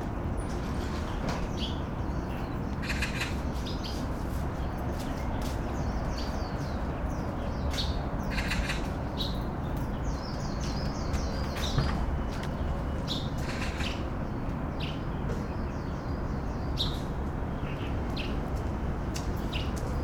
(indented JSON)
{
  "title": "Ln., Sec., Longmi Rd., Bali Dist., New Taipei City - Morning in the river",
  "date": "2012-04-09 06:11:00",
  "description": "Morning in the river, Birds singing, Traffic Sound\nBinaural recordings, Sony PCM D50 +Soundman OKM II",
  "latitude": "25.14",
  "longitude": "121.45",
  "altitude": "3",
  "timezone": "Asia/Taipei"
}